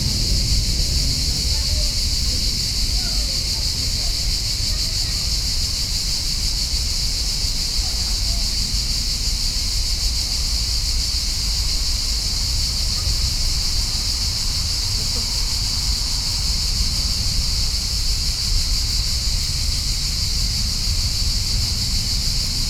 Parque Vale do Silêncio, Lisbon, Portugal - Summer cicadas
High volume cicadas on the park, Church-audio binaurals + zoom H4n